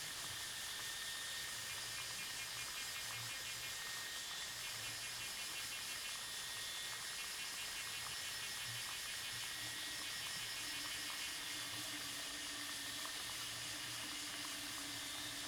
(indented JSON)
{
  "title": "白玉瀑布, Jhiben - Cicadas and Insects",
  "date": "2014-09-04 18:06:00",
  "description": "Cicadas sound, Insects sound, No water waterfall, Broken water pipes\nZoom H2n MS+XY",
  "latitude": "22.69",
  "longitude": "121.02",
  "altitude": "164",
  "timezone": "Asia/Taipei"
}